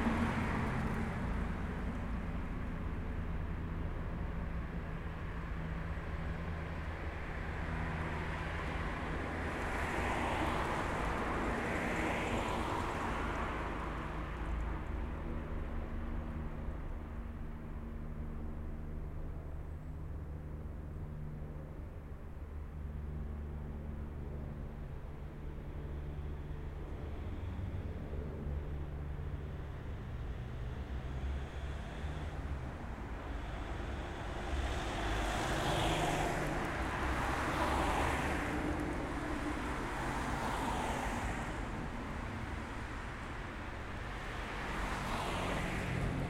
Bus station in the heart of ulm. there are a few people and traffik noise. Busses and suburban trains arrive and depart.
Ulm, Germany, 26 October 2012